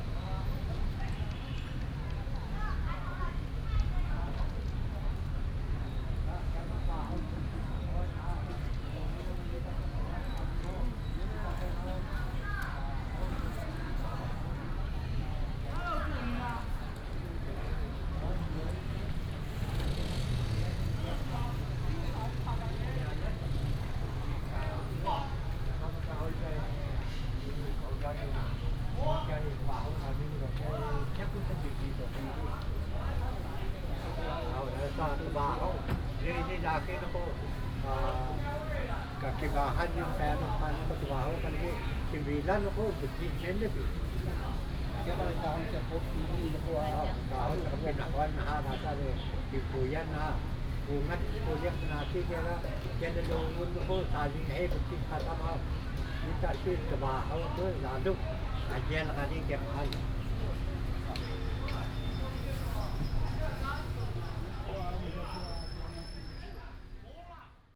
Walking in the park, Many old people, Use a variety of different languages in conversation
Hsinchu County, Taiwan, 17 January 2017, ~11:00